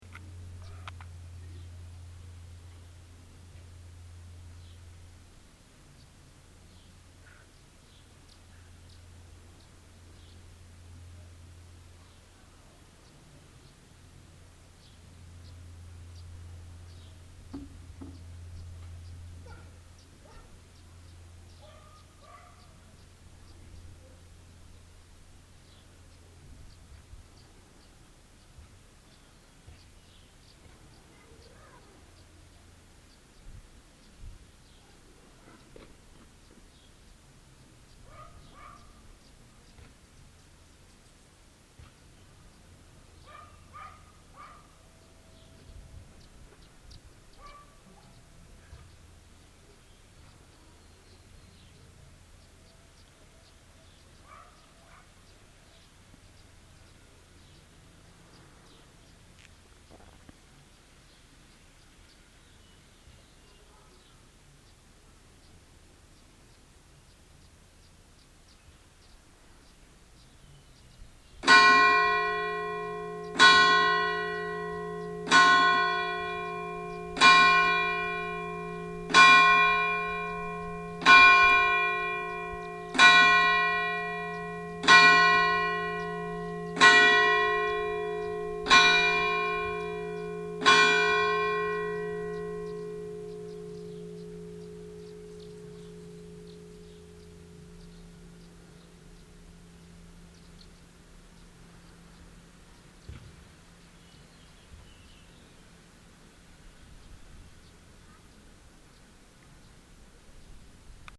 alto, castello, silent village, church - hour bell
the silence of the village, some wind and birds, then the hour bell of the church, which is always repeated in this region after 5 min.
soundmap international: social ambiences/ listen to the people in & outdoor topographic field recordings
14 July 2009, 12:23